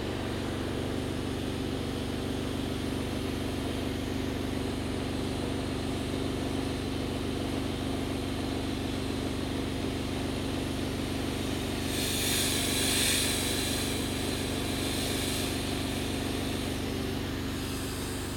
Hans-Sachs-Straße, Bielefeld, Deutschland - blast cleaning
Bielefeld, Germany, 23 November 2018